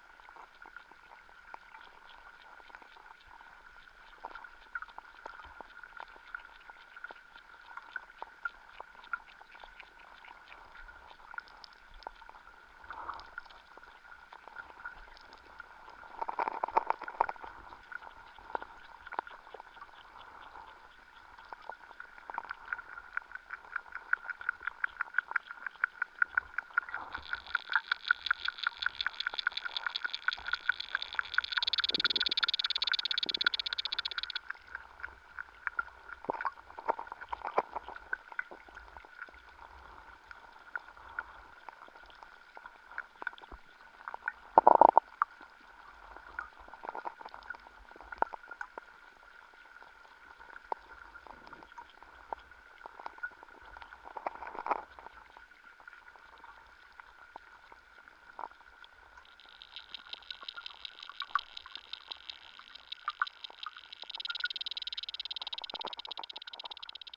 Narkūnai, Lithuania, pond underwater
Underwater microphones in a pond near abandoned raillway
Utenos rajono savivaldybė, Utenos apskritis, Lietuva